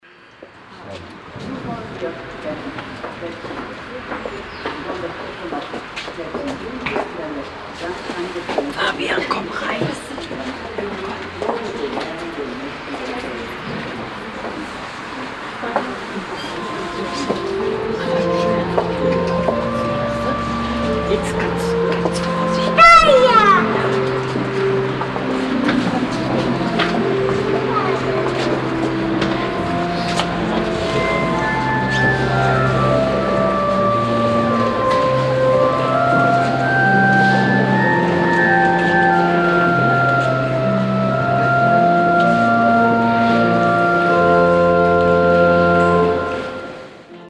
monheim, franz böhm str, st gereon, eingang + orgel
morgens vor einer trauung, versammeln der gemeinde, anspielen der orgel, ein kind
soundmap nrw:
social ambiences, topographic field recordings